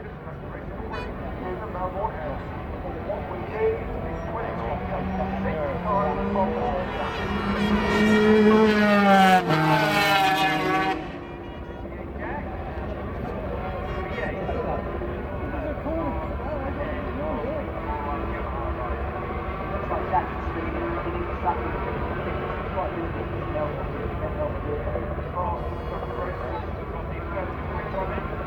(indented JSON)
{
  "title": "Castle Donington, UK - British Motorcycle Grand Prix 2001 ...",
  "date": "2001-07-08 13:30:00",
  "description": "500cc motorcycle race ... part two ... Starkeys ... Donington Park ... the race and associated noise ... Sony ECM 959 one point stereo mic to Sony Minidisk ...",
  "latitude": "52.83",
  "longitude": "-1.37",
  "altitude": "81",
  "timezone": "Europe/London"
}